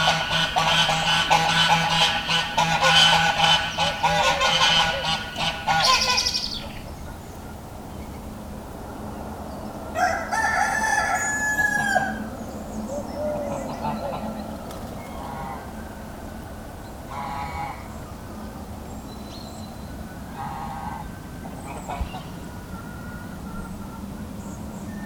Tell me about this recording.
Farm ambiance in the quiet village of Houx.